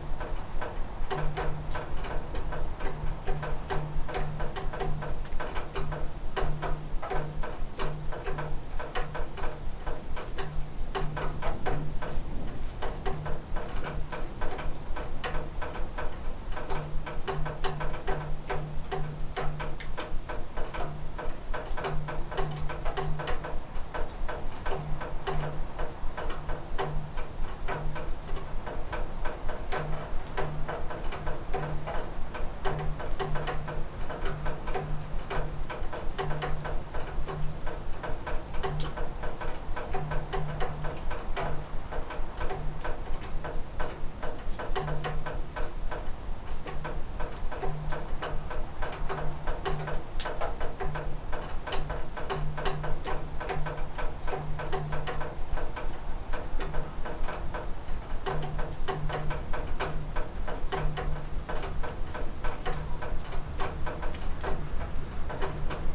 Šlavantai, Lithuania - House porch after the rain
Water dripping around a house porch after the rain. Recorded with ZOOM H5.